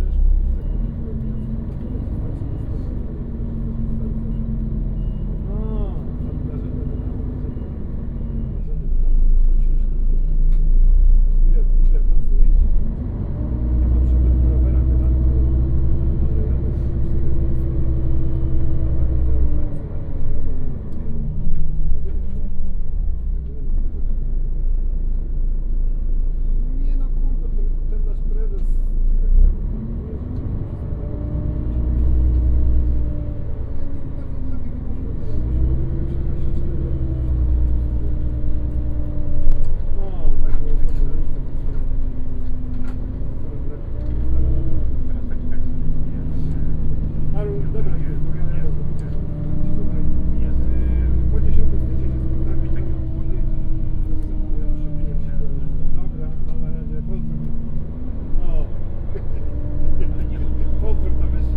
Poznan, bus depot departure terminal - seat taken
(binaural)waiting for the bus to depart. passengers getting in, taking their seats, taking of their coats, putting away their bags, talking in muffled voices, making phone calls. bus leaves the depot.